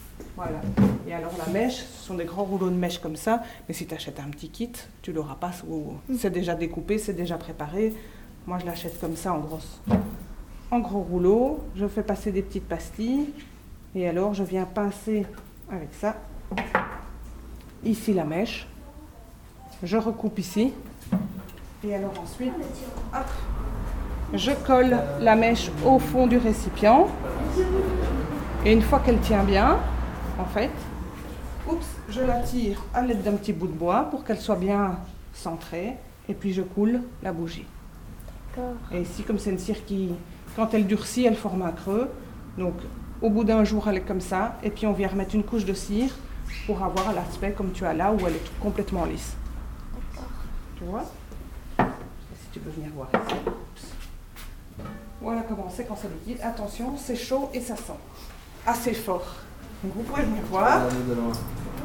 {
  "title": "Court-St.-Étienne, Belgique - candle manufacturer",
  "date": "2015-05-24 15:40:00",
  "description": "Children are recording a candle manufacturer. This place is a workshop where big candles are made and sold. Children are 6-8 years old.",
  "latitude": "50.64",
  "longitude": "4.57",
  "altitude": "67",
  "timezone": "Europe/Brussels"
}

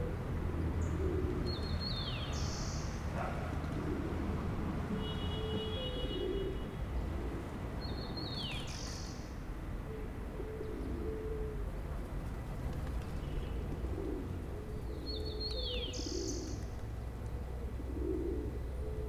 {
  "title": "San Martin, Tacna, Peru - A man waiting",
  "date": "2018-01-07 05:22:00",
  "description": "Crossing the border between Chile and Peru by night, arriving early in Tacna. Passing my time at the square in front of the church, recording the morning - a city waking up. A man talking and waiting.",
  "latitude": "-18.01",
  "longitude": "-70.25",
  "altitude": "575",
  "timezone": "GMT+1"
}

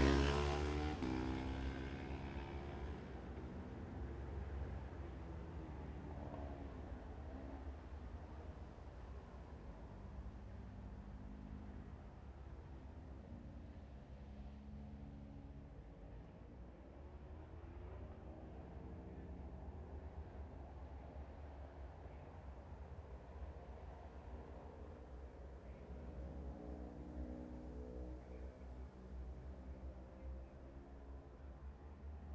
{
  "title": "Jacksons Ln, Scarborough, UK - Gold Cup 2020 ...",
  "date": "2020-09-11 11:25:00",
  "description": "Gold Cup 2020 ... twins practice ... Memorial Out ... dpa 4060s to Zoom H5 clipped to bag ...",
  "latitude": "54.27",
  "longitude": "-0.41",
  "altitude": "144",
  "timezone": "Europe/London"
}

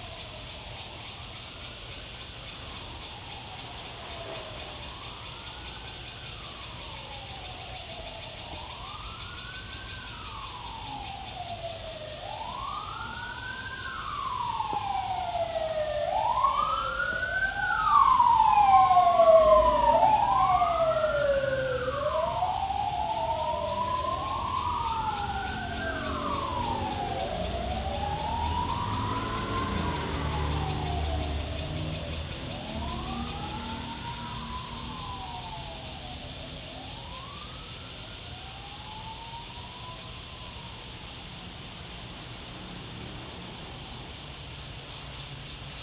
{
  "title": "淡水海關碼頭, New Taipei City - At the quayside",
  "date": "2015-07-18 06:30:00",
  "description": "At the quayside, Cicadas cry, The sound of the river, Traffic Sound\nZoom H2n MS+XY",
  "latitude": "25.17",
  "longitude": "121.43",
  "altitude": "9",
  "timezone": "Asia/Taipei"
}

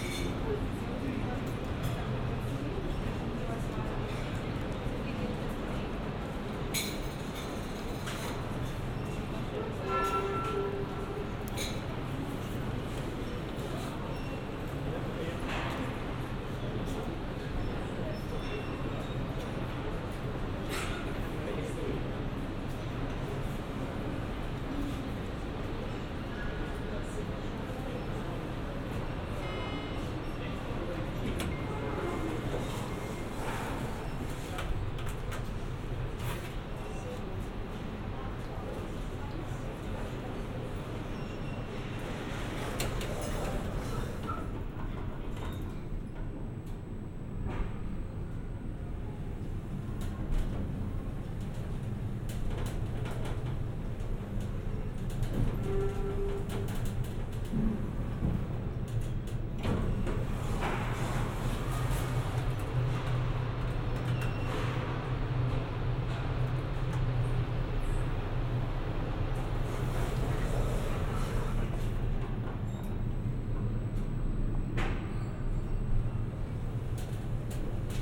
{
  "title": "Средний проспект В.О., Санкт-Петербург, Россия - traveling by elevator in the business center",
  "date": "2019-02-09 17:37:00",
  "description": "Traveling by elevator in the business center.",
  "latitude": "59.94",
  "longitude": "30.28",
  "altitude": "17",
  "timezone": "Europe/Moscow"
}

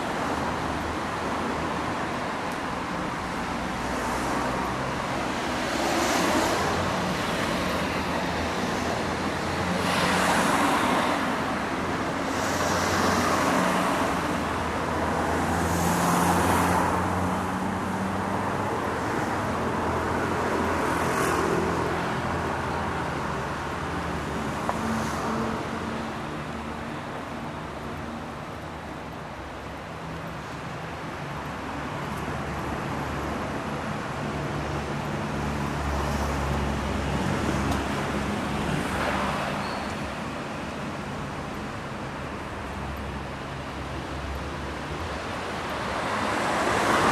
{
  "title": "Fullmoon Nachtspaziergang Part III",
  "date": "2010-10-23 22:22:00",
  "description": "Fullmoon on Istanbul, continuing uphill 19 Mayıs Caddesi",
  "latitude": "41.06",
  "longitude": "28.99",
  "altitude": "55",
  "timezone": "Europe/Istanbul"
}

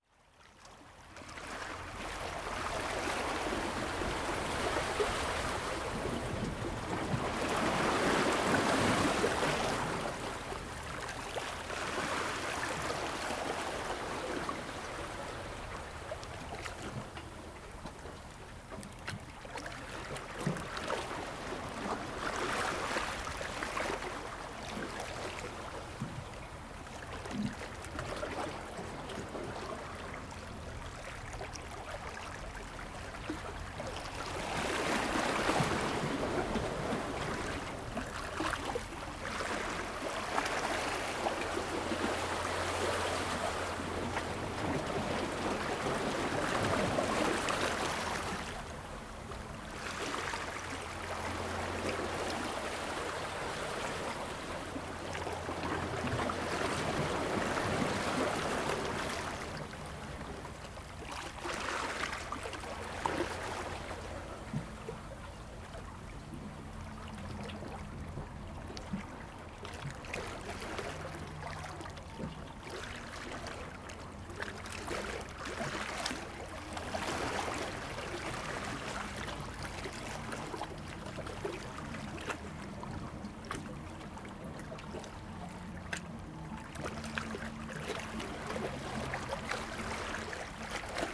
{
  "title": "N Mole Dr, North Fremantle WA, Australia - Sunset Soundscape - from the waters edge",
  "date": "2017-11-07 18:45:00",
  "description": "Fishermen to the south west. The water was lapping against the rocks with an incredible sunset. Not much wind (for Fremantle, for the North Mole!).",
  "latitude": "-32.05",
  "longitude": "115.73",
  "altitude": "2",
  "timezone": "Australia/Perth"
}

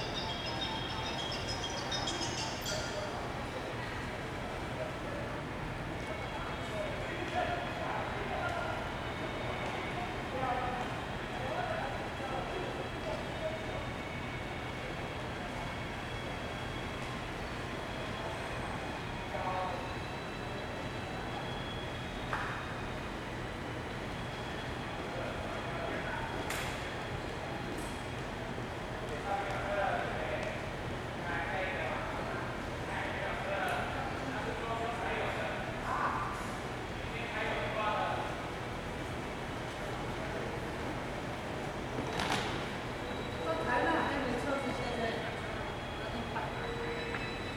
{
  "title": "Kaohsiung Station - Night station hall",
  "date": "2012-03-29 23:24:00",
  "description": "The station hall at night, Station broadcast messages, Sony ECM-MS907, Sony Hi-MD MZ-RH1",
  "latitude": "22.64",
  "longitude": "120.30",
  "altitude": "12",
  "timezone": "Asia/Taipei"
}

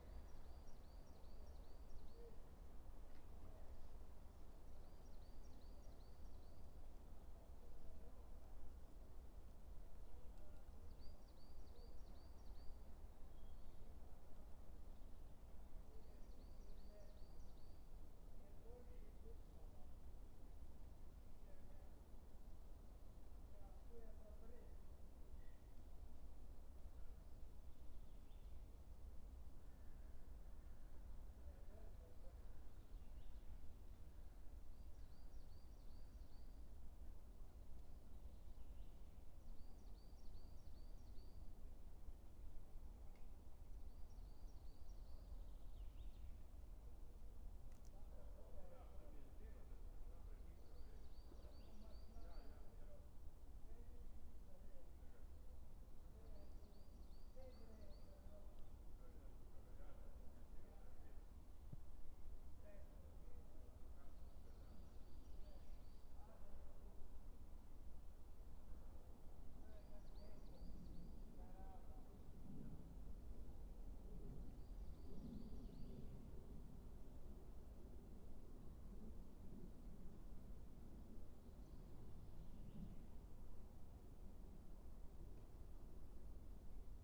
{
  "title": "dale, Piramida, Slovenia - afternoon quietness",
  "date": "2013-04-08 17:11:00",
  "description": "two men approaching slowly from a far, birds, small sounds",
  "latitude": "46.58",
  "longitude": "15.65",
  "altitude": "376",
  "timezone": "Europe/Ljubljana"
}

